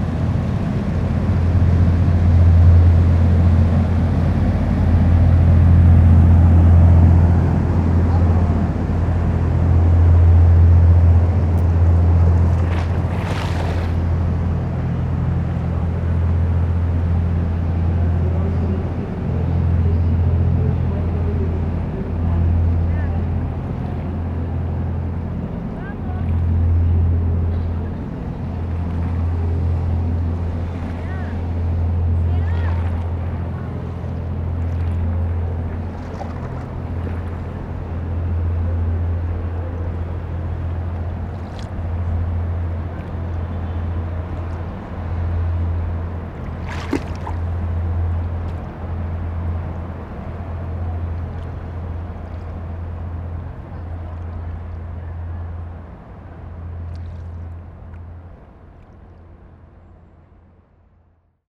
A tourists boat is passing by on the Seine river near the Notre-Dame cathedral.